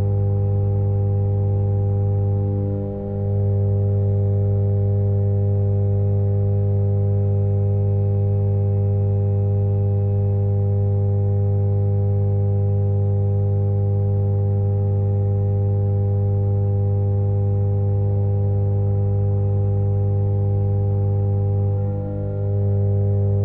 Muhlenberg College Hillel, West Chew Street, Allentown, PA, USA - Big Field Light
Buzz of field light